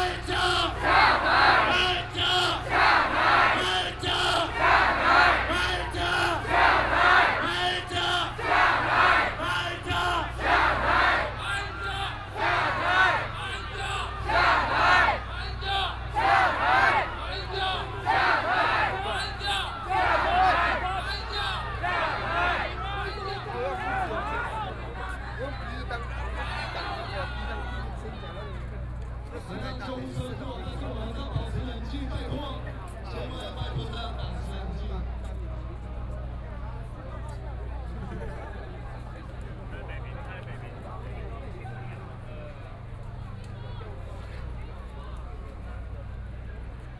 Zhongshan N. Rd., Taipei City - Outrageously day
government dispatched police to deal with students, Students sit-in protest, Students do not have any weapons, tools, Occupied Executive Yuan
Riot police in violent protests expelled students, All people with a strong jet of water rushed, Riot police used tear gas to attack people and students
Binaural recordings, Sony PCM D100 + Soundman OKM II
Zhōngxiào West Rd, 41號米迪卡數位有限公司, 24 March, 04:45